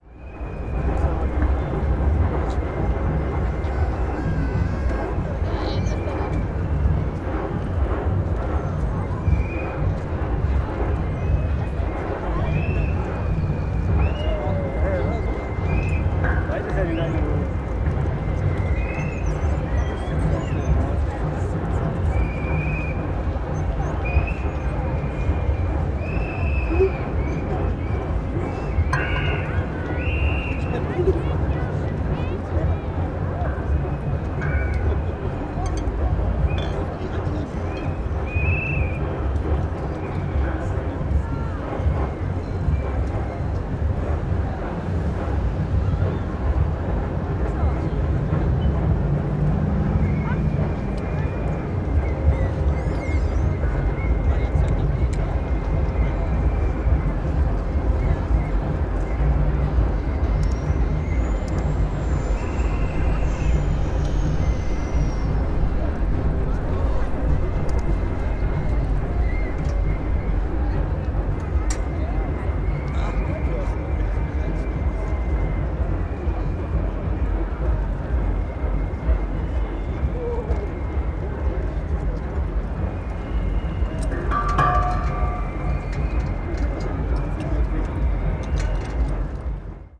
berlin potsdamer str., reichpietschufer - protests against nulear power
sound of the nearby anti nuclear power protesters
Berlin, Germany, 26 March